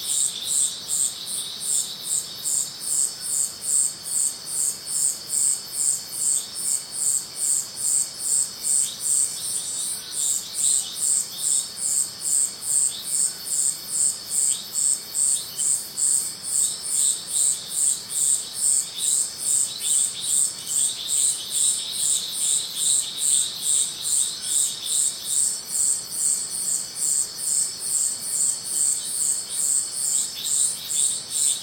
Iracambi - loud declination
recorded at Iracambi, a NGO dedicated to preserve and grow the Atlantic Forest